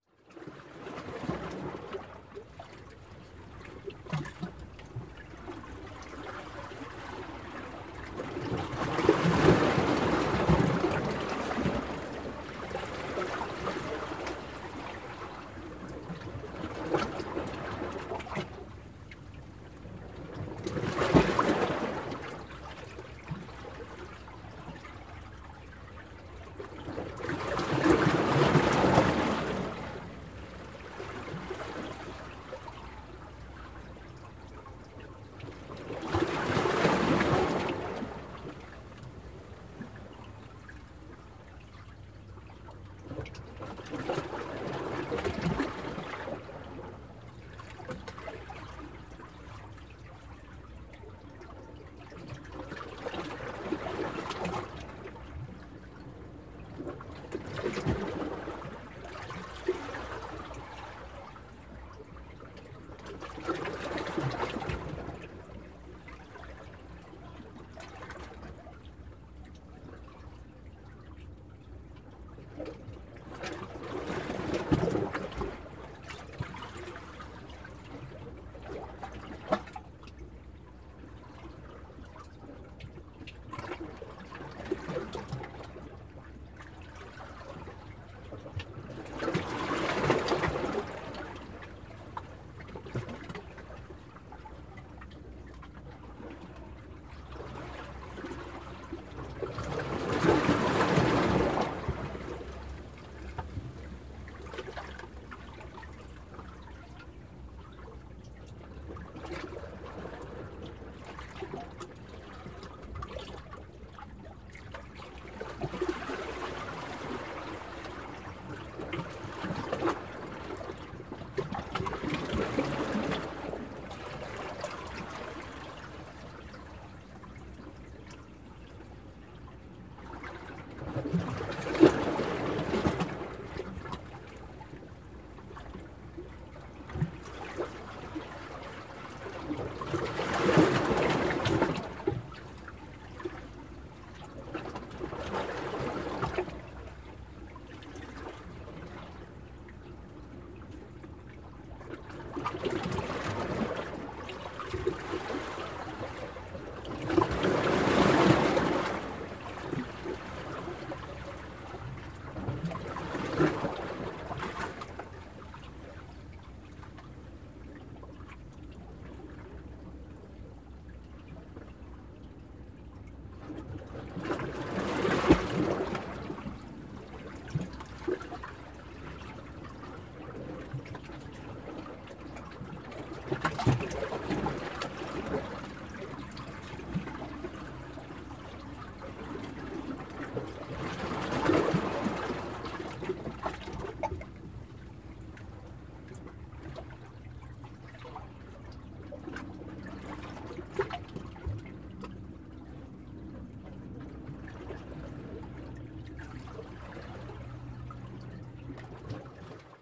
Villefranche-sur-Mer, France - Villefranche sea sounds
Sounds of the waves being broken up from within the rocky jetty. Mics were under some rocks and close to the water, so sounds from outside the jetty are reduced.
(Zoom H4n internal mics)